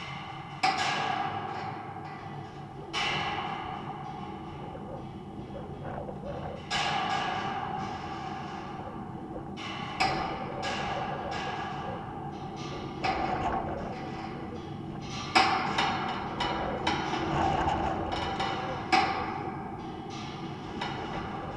Lithuania, Utena, a fence
metallic fence...contact microphone recording